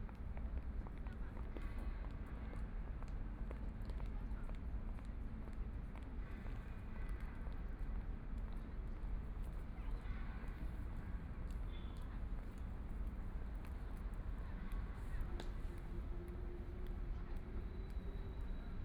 Taipingqiao Park, Shanghai - Footsteps
Footsteps, The distant sound of construction sites, Traffic Sound, Binaural recording, Zoom H6+ Soundman OKM II